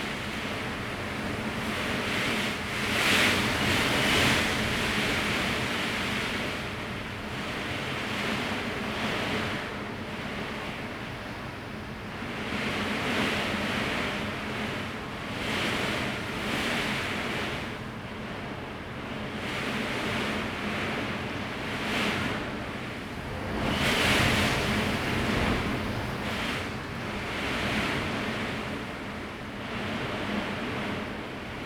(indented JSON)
{
  "title": "Daren St., Tamsui Dist., New Taipei City - strong wind and rain",
  "date": "2015-08-08 02:55:00",
  "description": "typhoon, Gradually become strong wind and rain\nZoom H2n MS+XY",
  "latitude": "25.17",
  "longitude": "121.44",
  "altitude": "45",
  "timezone": "Asia/Taipei"
}